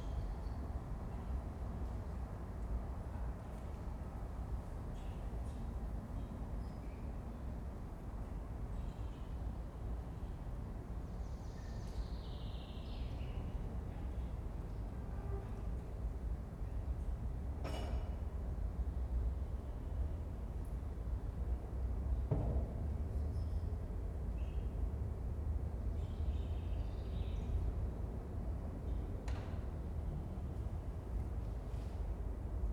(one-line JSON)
{"title": "Praha, cemetery, russian church", "date": "2011-06-23 13:15:00", "description": "a priest and a mourning woman whispering a the russian orthodox church. the priests phone rings.", "latitude": "50.08", "longitude": "14.48", "altitude": "269", "timezone": "Europe/Prague"}